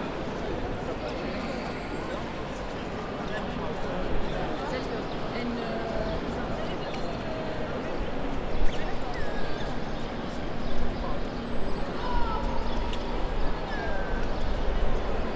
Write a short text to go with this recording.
ambience of the galleria, tourists, a chirping bird i couldn locate, could be coming from a speaker